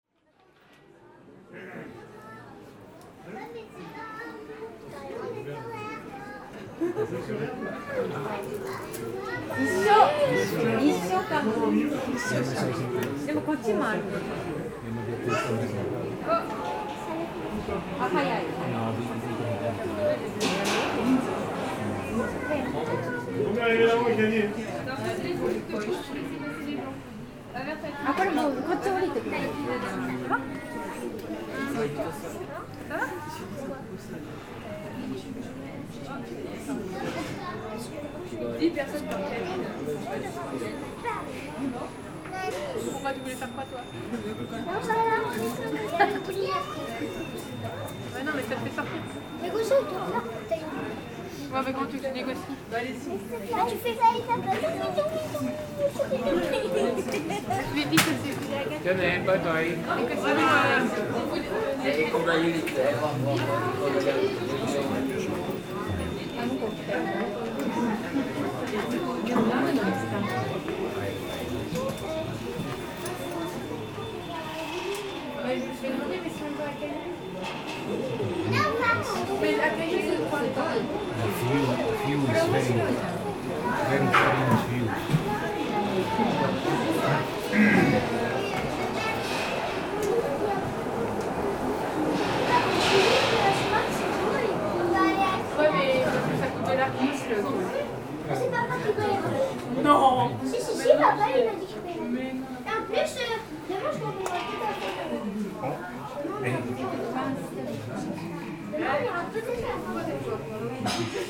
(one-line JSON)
{"title": "Le Tréport, France - Le Tréport funicular", "date": "2017-11-03 15:00:00", "description": "Using the funicular located in the city called Le Tréport. Its a huge funicular using small funny cabins. Everything is free, you can use it as a lift and theres a great view. During this recording, people wait and gradually, we embark in the funicular.", "latitude": "50.06", "longitude": "1.37", "altitude": "25", "timezone": "Europe/Paris"}